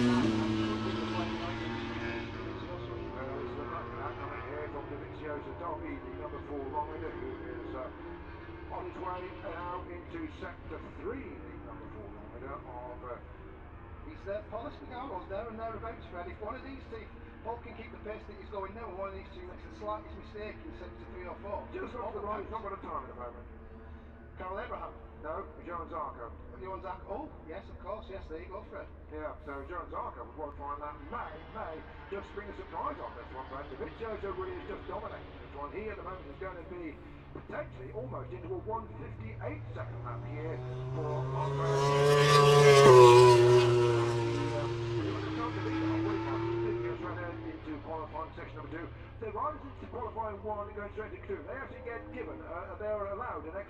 Silverstone Circuit, Towcester, UK - british motorcycle grand prix 2019 ... moto grand prix ... q1 ...
british motor cycle grand prix 2019 ... moto grand prix qualifying one ... and commentary ... copse corner ... lavalier mics clipped to sandwich box ...
24 August 2019, ~14:00